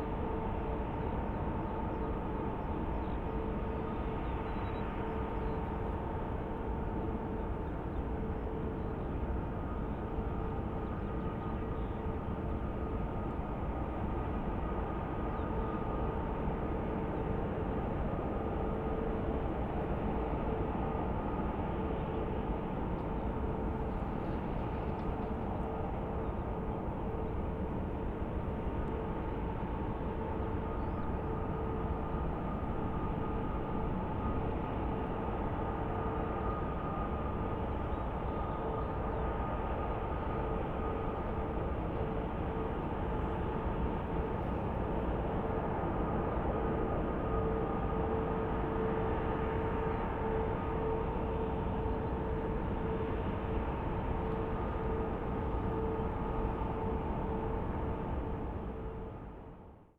Pesch, Erkelenz, Garzweiler II - sounds from the pit
Garzweiler II, lignite mining, drones from within the pit, recorded at the western boundary (as of april 2012, things change quickly here)
(tech: SD702, Audio Technica BP4025)
Erkelenz, Germany, 3 April, 16:05